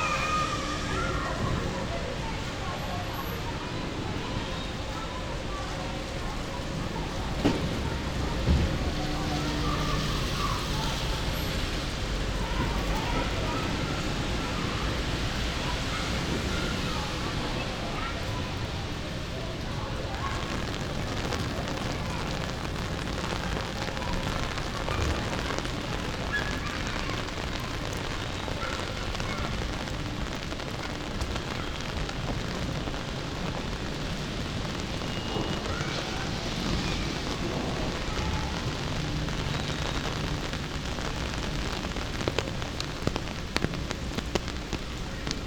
{
  "title": "Ascolto il tuo cuore, città. I listen to your heart, city. **Several chapters SCROLL DOWN for all recordings ** - I’m walking in the rain, Monday again, in the time of COVID19 Soundwalk",
  "date": "2020-06-08 15:50:00",
  "description": "\"I’m walking in the rain, Monday again, in the time of COVID19\" Soundwalk\nChapter CI of Ascolto il tuo cuore, città. I listen to your heart, city\nMonday, June 8th 2020. San Salvario district Turin, walking to Corso Vittorio Emanuele II and back, ninety days after (but day thirty-six of Phase II and day twenty-three of Phase IIB and day seventeen of Phase IIC) of emergency disposition due to the epidemic of COVID19.\nStart at 3:50 p.m. end at 4:09 p.m. duration of recording 19’11”\nAs binaural recording is suggested headphones listening.\nThe entire path is associated with a synchronized GPS track recorded in the (kmz, kml, gpx) files downloadable here:\ngo to Chapter LI, Monday April 20th 2020",
  "latitude": "45.06",
  "longitude": "7.69",
  "altitude": "237",
  "timezone": "Europe/Rome"
}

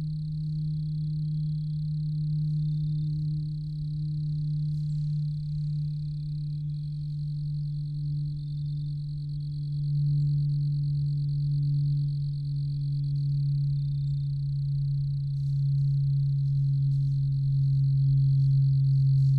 {
  "title": "Downe, NJ, USA - forest intrusions",
  "date": "2016-11-01 18:00:00",
  "description": "A swamp setting provides a mixture of forest sounds (a bird of some sort seems to peck at my setup halfway through) and manmade intrusions (aircraft and a siren). Location: Bear Swamp",
  "latitude": "39.31",
  "longitude": "-75.14",
  "altitude": "11",
  "timezone": "America/New_York"
}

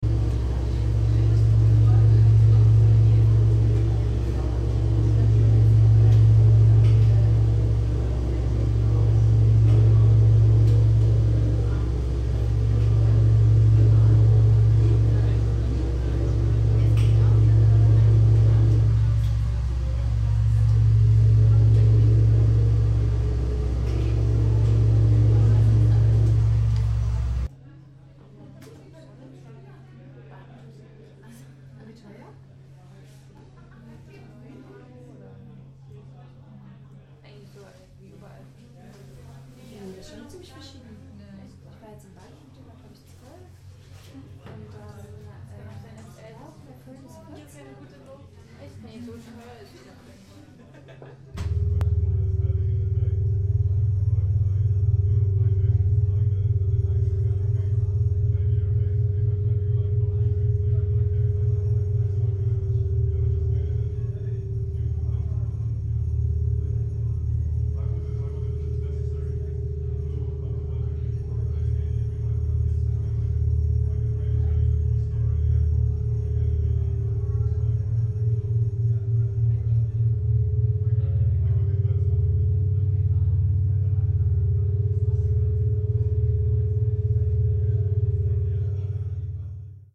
{
  "title": "unpredictable encounter @ general public - more or less unpredictable encounters",
  "description": "various sounds from different parts of the exhibition:\n* hum and noise from fans, switches and some other devices switched on & off by visitors\n* girls talking in silent lounge (bit predictable)\n* sine tones interfering in a space. isn't that the most predictable setting? but: a gin tonic glass vibrates nicely...",
  "latitude": "52.53",
  "longitude": "13.41",
  "altitude": "53",
  "timezone": "GMT+1"
}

